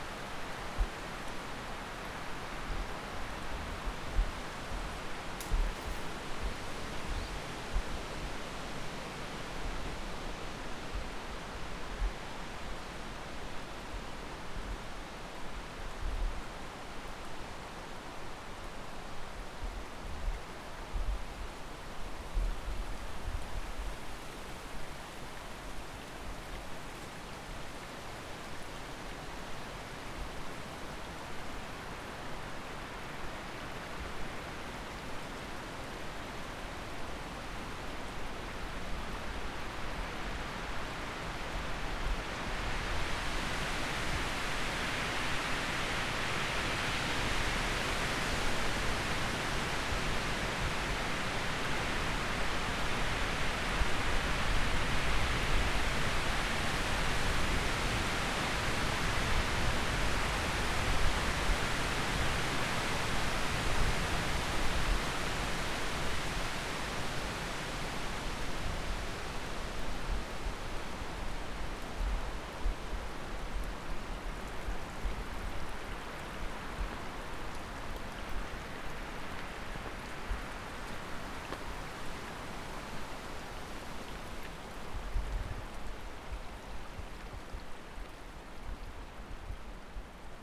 Ku Dziurze valley, wind in the trees
this track was not processed at all. it is also not a mix a few tracks. the hiss you hear is not a synthetic noise but only the sound of wind in the trees